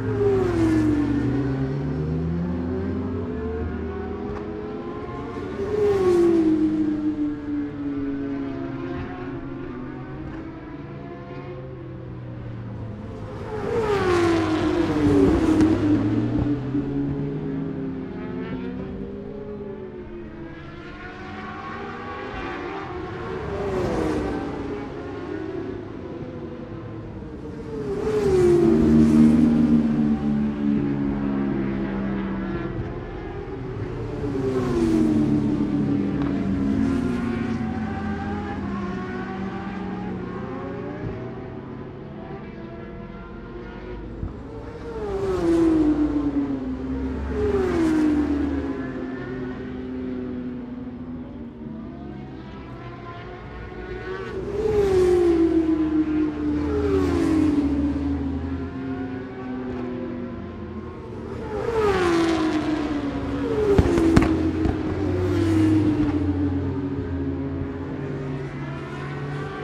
Scratchers Ln, West Kingsdown, Longfield, UK - British Superbikes 2005 ... FP1 ...
British Superbikes 2005 ... FP1 ... Audio Technica one point mic ...
26 March 2005